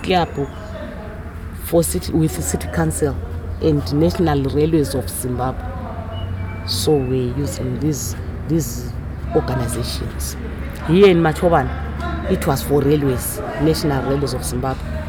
outside Thandanani's rehearsal room, Matshobana, Bulawayo, Zimbabwe - We are here...
An interview with Ellen Mlangeni, the leader of Thandanani followed under a tree outside their rehearsal room (voices from the other women inside the room are in the background). Ellen tells the story how the group formed and recounts the history of their recordings and successes (you’ll hear the drone of the nearby road into town; and, unfortunately, the midday breeze in my mic in the second track of the interview…)
You can find the entire list of recordings from that day archived here: